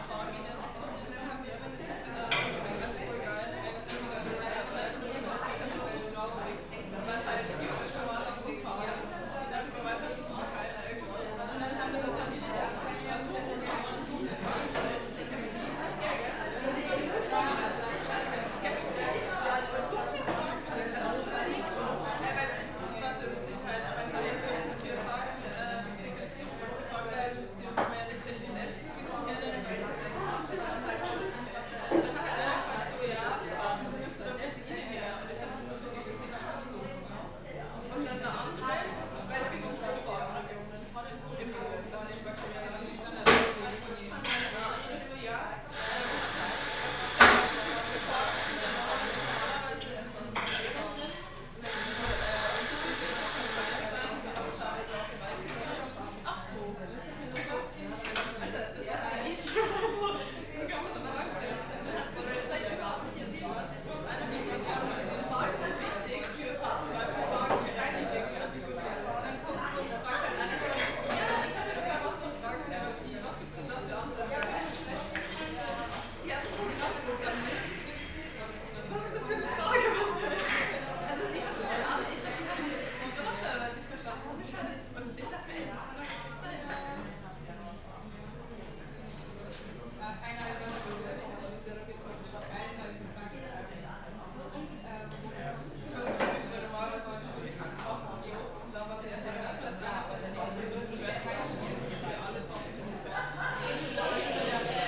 Im Café Lila in Regensburg. Innenaufnahme.